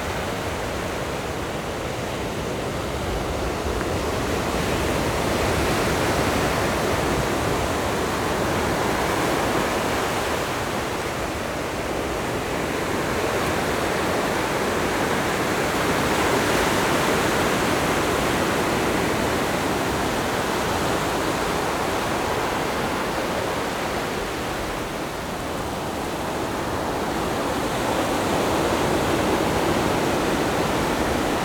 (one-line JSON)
{"title": "五結鄉季新村, Yilan County - Sound of the waves", "date": "2014-07-27 14:53:00", "description": "In the beach, Sound of the waves\nZoom H6 MS+ Rode NT4", "latitude": "24.69", "longitude": "121.84", "timezone": "Asia/Taipei"}